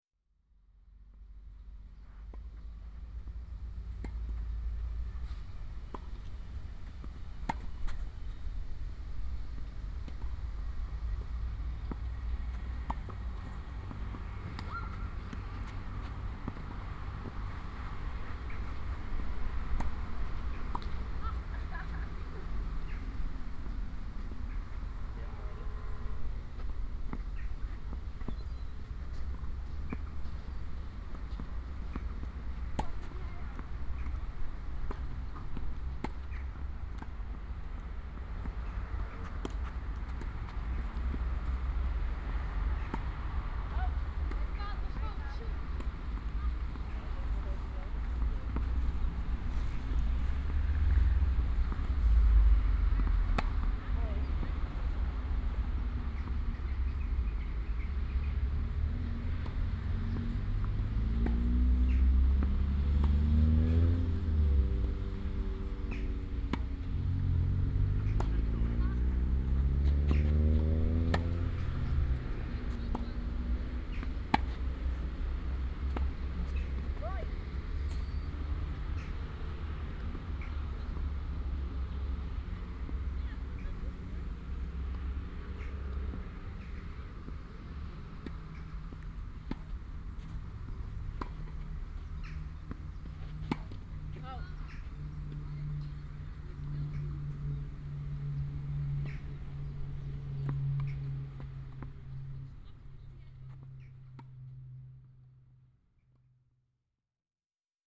tennisbaan, verkeer
tennis court